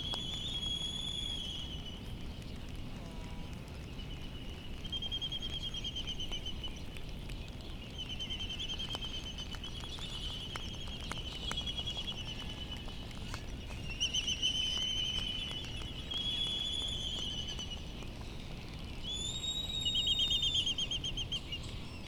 United States Minor Outlying Islands - Midway Atoll soundscape ...
Midway Atoll soundscape ... Sand Island ... birds calls from laysan albatross ... bonin petrel ... white tern ... distant black-footed albatross ... and cricket ... open lavalier mics on mini tripod ... background noise and some wind blast ... petrels calling so still not yet light ...